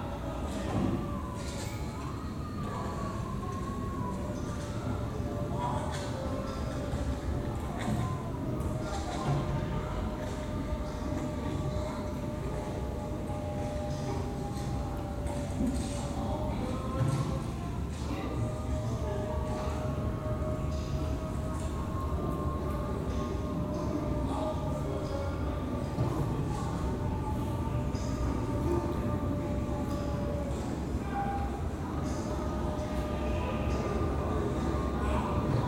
Kaunas, Lithuania, Marina Abramović’s exhibition
A walk in the first hall of Marina Abramović’s exhibition "Memory of Being".
26 July 2022, ~11:00